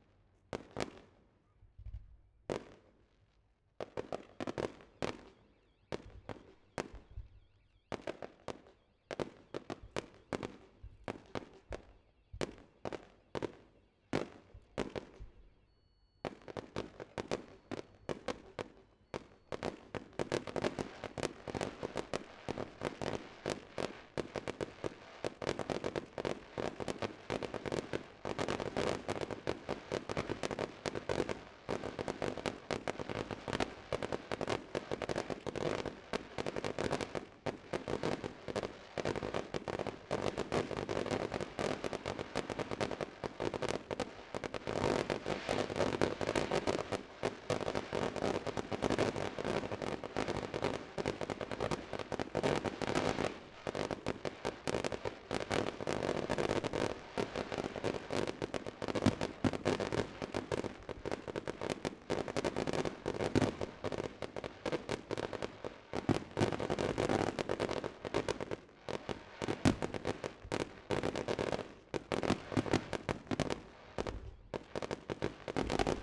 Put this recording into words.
FireWorks in Normandy, Zoom F3 and two Rode NT55